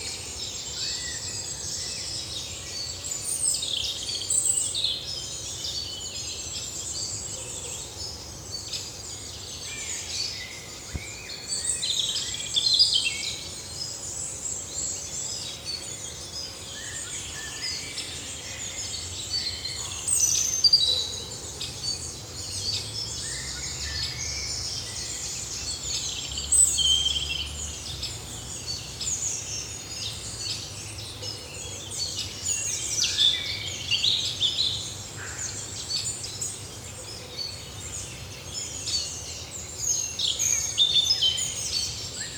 Thuin, Belgique - Birds in the forest
A lot and a lot of juvenile Great Tit, Robin, Common Chaffinch, Common Buzzard, cyclists.
Thuin, Belgium, 3 June, 10:35